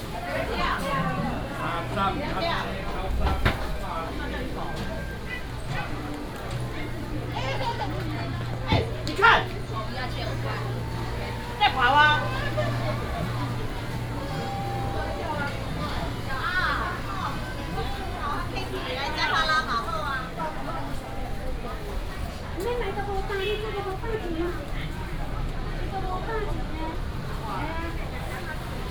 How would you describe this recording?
Walking in the Public retail market, vendors peddling, Binaural recordings, Sony PCM D100+ Soundman OKM II